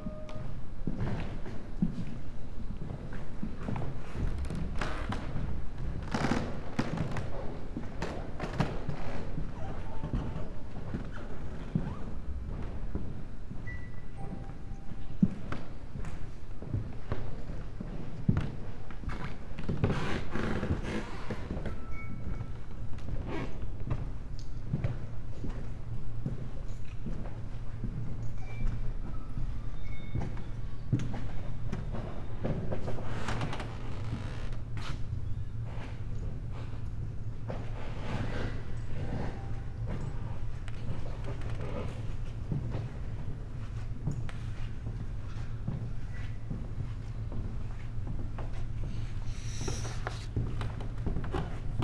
walk, wooden floor and sonic scape at Museen Dahlem, "Probebühne 1", sounds of paper, small talks
19 May 2013, 16:04